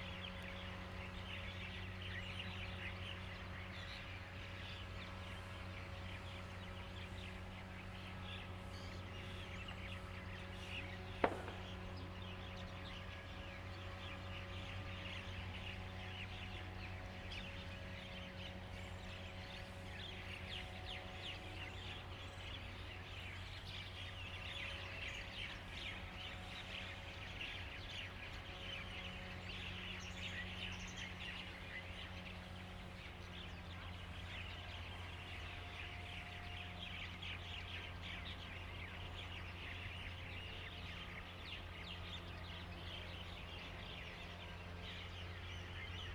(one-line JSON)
{"title": "鐵漢堡, Lieyu Township - Birds singing", "date": "2014-11-04 09:08:00", "description": "Birds singing, Lawn mower, Abandoned military sites\nZoom H2n MS+XY", "latitude": "24.45", "longitude": "118.26", "altitude": "15", "timezone": "Asia/Shanghai"}